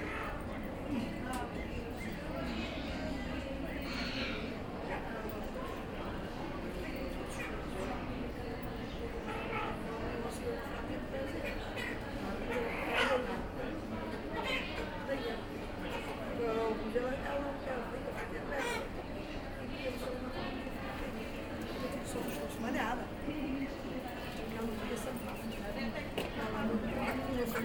porto, mercato do bolhao - fruit sellers
fruit sellers, short soundwalk, (binaural)
16 October 2010, 10:20